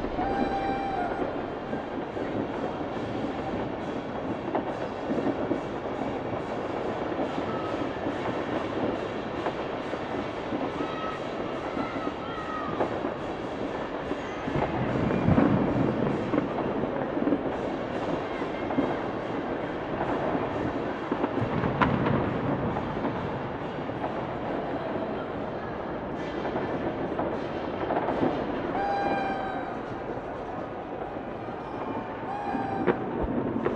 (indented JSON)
{
  "title": "NEW YEAR Fireworks 1800-078 Lisboa, Portugal - 2021 NEW YEAR Fireworks",
  "date": "2020-12-31 23:53:00",
  "description": "New year 2021 fireworks. Recorded with a SD mixpre and a AT BP4025 (XY stereo).",
  "latitude": "38.76",
  "longitude": "-9.12",
  "altitude": "95",
  "timezone": "Europe/Lisbon"
}